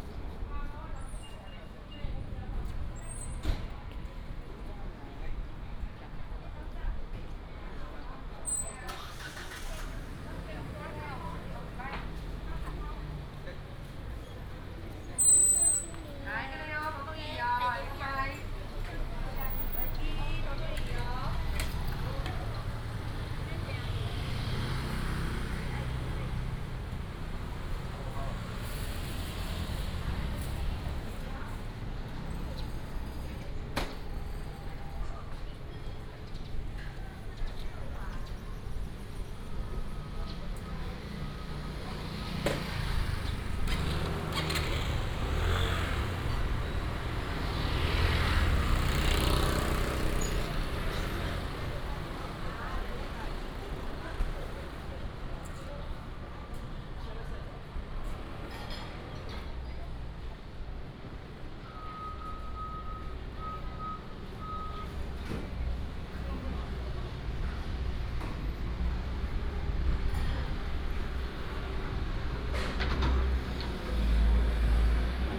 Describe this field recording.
Small town, Traffic sound, market